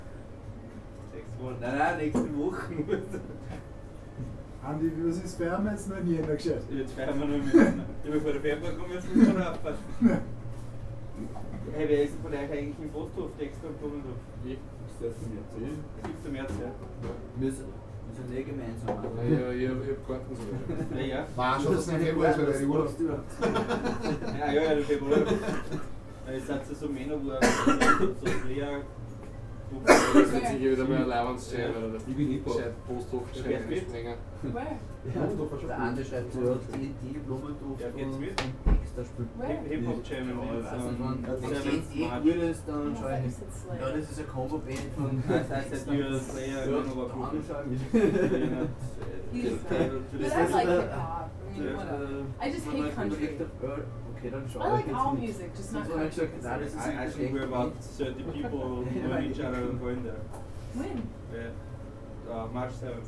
Alt-Urfahr, Linz, Österreich - sonnenstein-buffet
sonnenstein-buffet, linz-urfahr